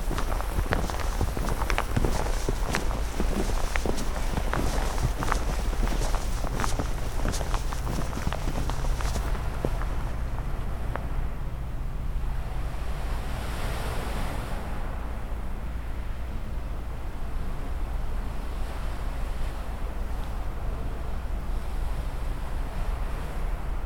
Kantrida, Rijeka, walking on snow
Rijeka, Croatia, 26 December